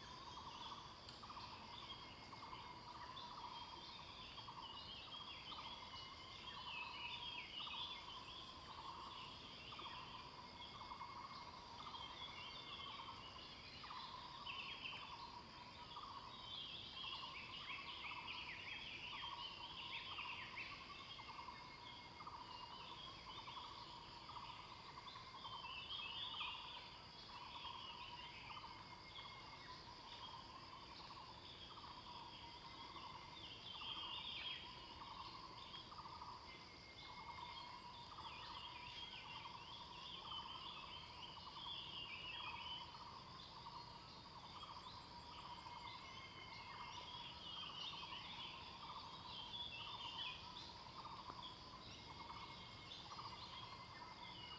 桃米里, Puli Township, Nantou County - Early morning
Bird sounds, Traffic Sound
Zoom H2n MS+XY
Puli Township, 水上巷